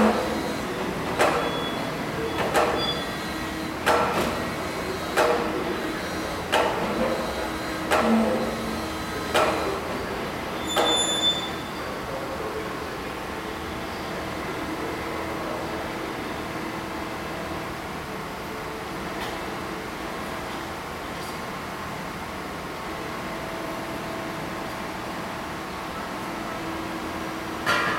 zurich main station, building site - zurich main station, reparation works
platform and railtrack being renovated. machines, workers etc. recorded june 16, 2008. - project: "hasenbrot - a private sound diary"
Zurich, Switzerland